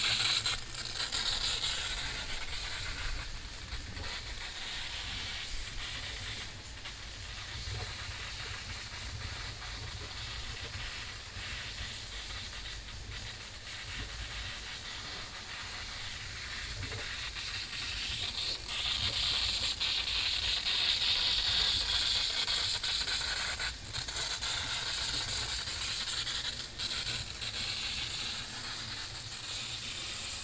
白玉瀑布, Jhiben - Cicadas sound
No water waterfall, Sound from pressure pipe
Taitung County, Taiwan, September 2014